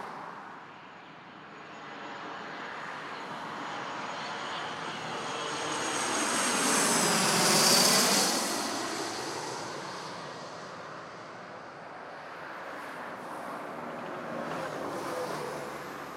Ringway Road
Gates, airport 23R, cars